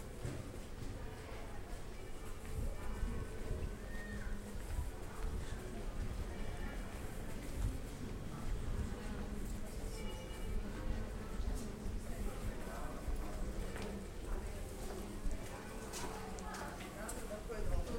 Venise, Italie - Vespera
Angelus bells in Venezia. Walking around San Rocco & San Pantalon one can hear the sound of bells differently colored by the size & configuration of each little street but also a strange acoustic phenomenon wich is the permanence of a certain range of frequencies (around 400/500Hz) all along the walk. It feels like the whole space is saturated by this tone.